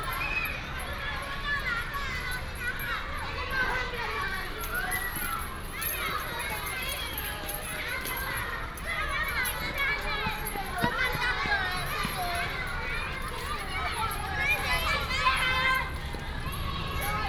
Daming St., West Dist., Taichung City - Class break time
Class break time, Primary school students